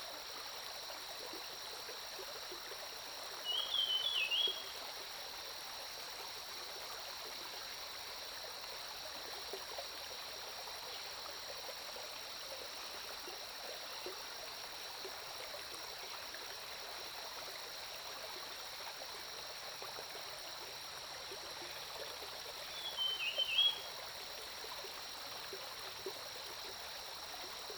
Early morning, Bird calls, Brook
Zoom H2n MS+XY
Nantou County, Taiwan, 11 June 2015, 05:48